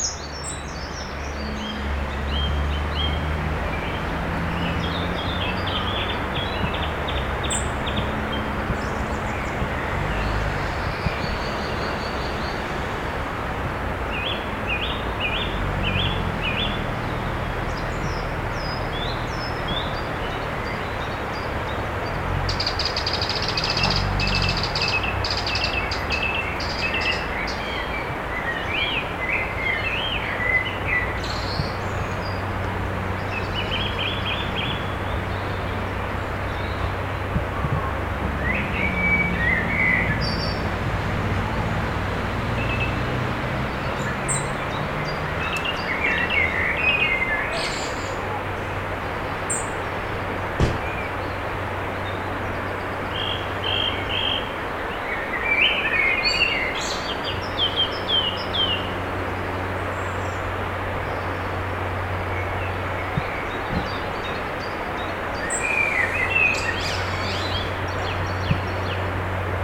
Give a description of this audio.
Birds in a little forest in front of the beach, Houlgate, Normandy, France, Zoom H6